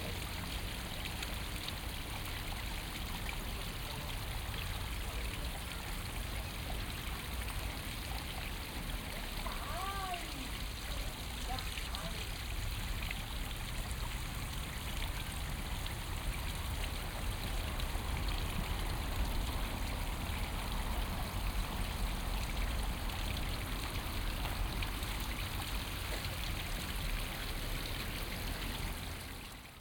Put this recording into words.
indischer Brunnen im Engelbecken, ehemals Luisenstädtischer Kanal. Der Luisenstädtische Kanal ist ein historischer innerstädtischer Kanal in der Berliner Luisenstadt, der die Spree mit dem Landwehrkanal verband. Er wurde 1852 eröffnet und verlief durch die heutigen Ortsteile Kreuzberg und Mitte. 1926 wurde der Kanal teilweise zugeschüttet und in eine Gartenanlage umgestaltet. Mit dem Mauerbau im Jahr 1961 verlief bis 1989 entlang des nördlichen Teils des Kanals die Grenze zwischen Ost- und West-Berlin. Seit 1991 wird die seit dem Zweiten Weltkrieg zerstörte Gartenanlage abschnittsweise rekonstruiert. indian fountain at Engelbecken, former Louisenstadt canal